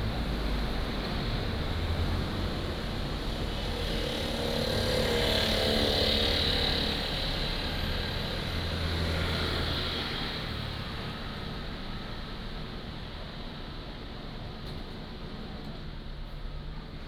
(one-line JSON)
{"title": "Guangfu Rd., Yuli Township - small Town", "date": "2014-10-08 18:14:00", "description": "small Town, Traffic Sound, Next to the Agricultural land", "latitude": "23.33", "longitude": "121.31", "altitude": "137", "timezone": "Asia/Taipei"}